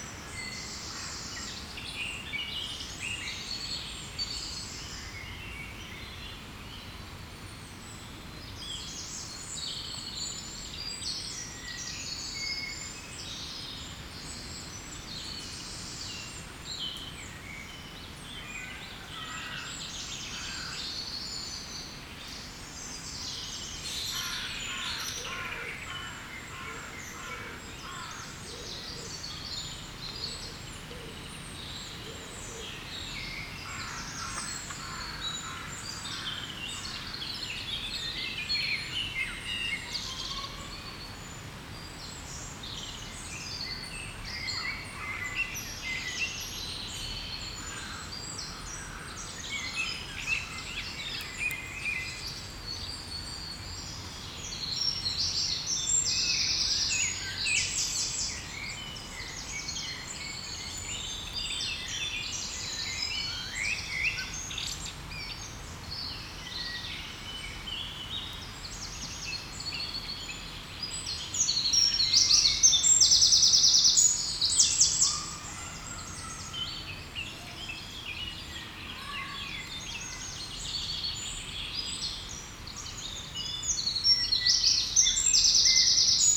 Mont-Saint-Guibert, Belgique - In the woods
Recording of the birds during springtime, in the woods of Mont-St-Guibert. There's a lot of wind in the trees.
Mont-Saint-Guibert, Belgium, 20 May 2017